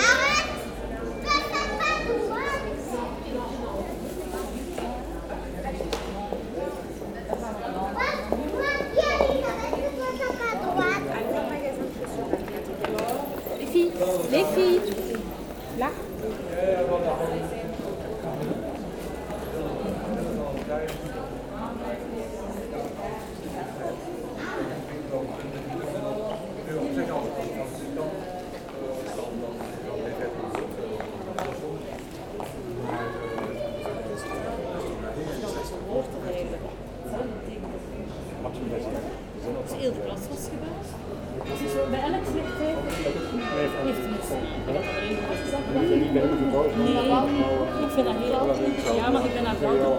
People discussing on the main commercial artery, children running and screaming.